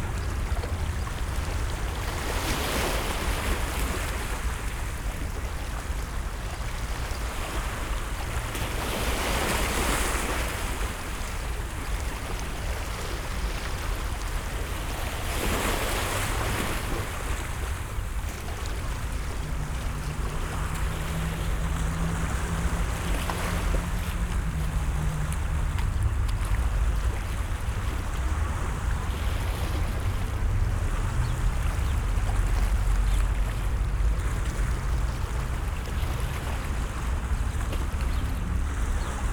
Athen, Palaio Faliro, Leof. Posidonos - beach, waves
waves lapping at the beach
(Sony PCM D50, DPA4060)
Paleo Faliro, Greece, 6 April 2016